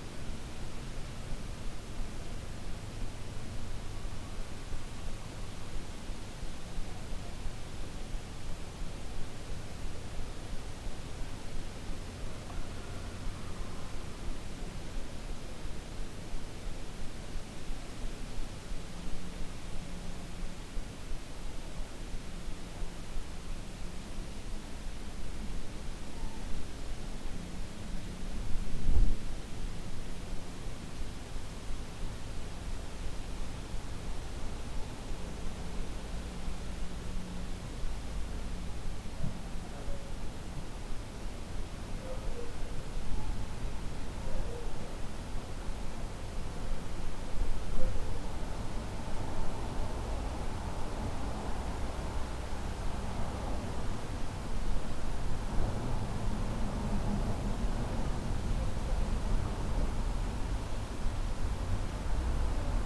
Lipa, Lipa, Polska - Ruiny Zamku w Lipie - Dźwięk zastygły w czasie.
Projekt „Dźwięk zastygły w czasie” jest twórczym poszukiwaniem w muzyce narzędzi do wydobycia i zmaterializowania dźwięku zaklętego w historii, krajobrazie, architekturze piastowskich zamków Dolnego Śląska.Projekt dofinansowany ze środków Ministerstwa Kultury i Dziedzictwa Narodowego.